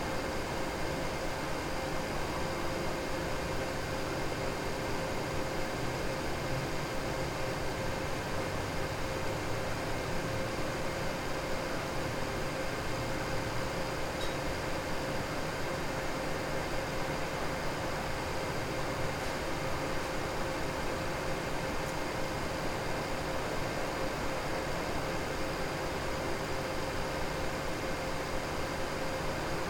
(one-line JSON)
{"title": "Kauno autobusų stotis, Kaunas, Lithuania - Kaunas bus station, evening atmosphere", "date": "2021-04-22 21:43:00", "description": "A recording of an almost empty Kaunas bus station platform in a late evening. Distant traffic and a nearby LED announcement board hum combines into a steady drone. Recorded with ZOOM H5.", "latitude": "54.89", "longitude": "23.93", "altitude": "30", "timezone": "Europe/Vilnius"}